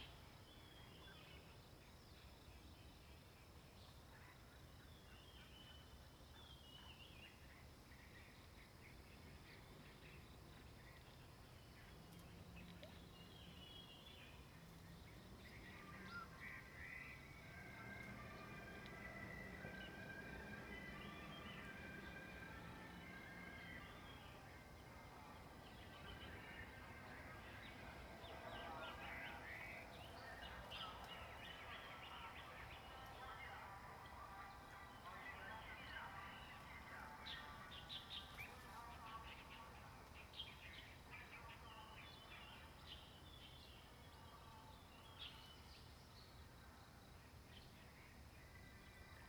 草楠濕地, 桃米里, Puli Township - wetlands
in the wetlands, Bird sounds, Traffic Sound
Zoom H2n MS+XY
Nantou County, Taiwan, 27 March 2016